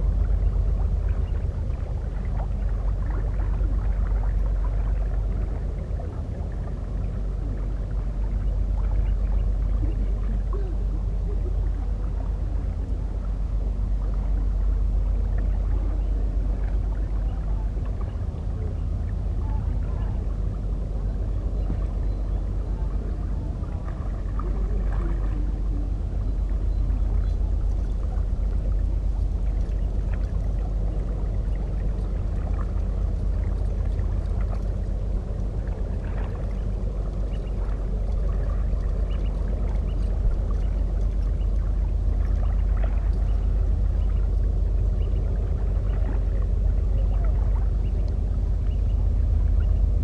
Nathan-Kahn-Straße, Köln, Germany - Rhein recording
Recording by the river, a barge passing by, wind through grass, and people walking along.
(Recorded with Zoom H5 and Soundman OKM I solo)
Nordrhein-Westfalen, Deutschland, 22 March 2020, 16:30